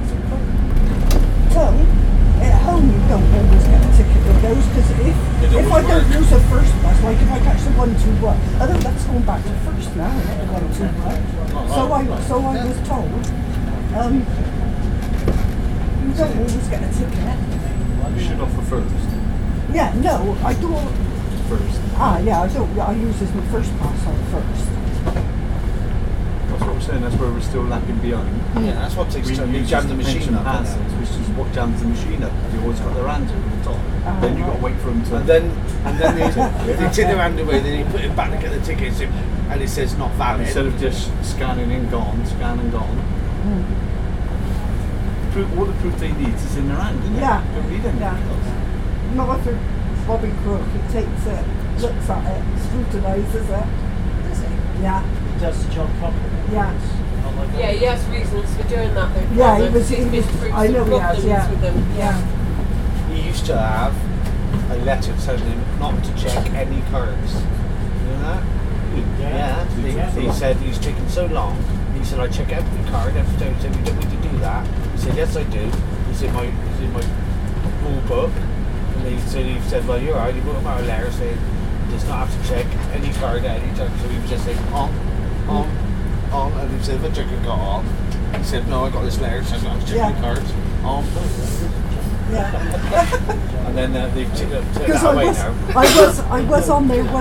Ventnor, Isle of Wight, UK - conversation on bus about buses
Upper deck of no 3 bus West country holidaymakers discussing buses and the weather, ambient bus noise, H2n recorder
8 November